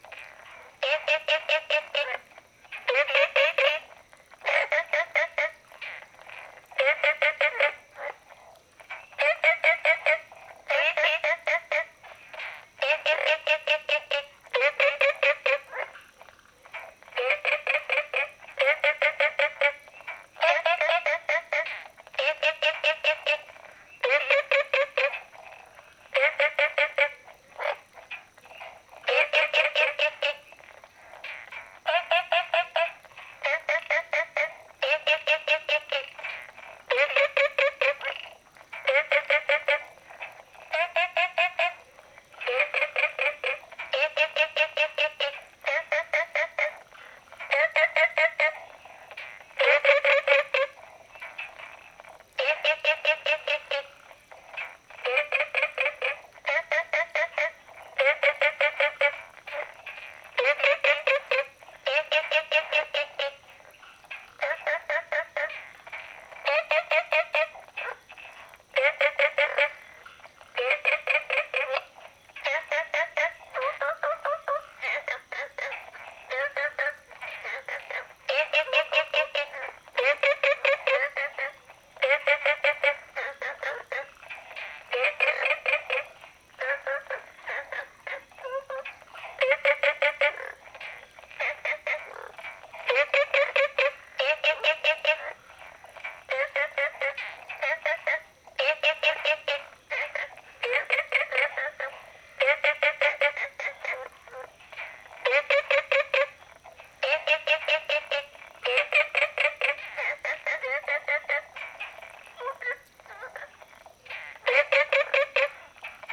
綠屋民宿, Puli Township - Small ecological pool
Frogs chirping, Small ecological pool
Zoom H2n MS+XY
Puli Township, Nantou County, Taiwan, 9 June 2015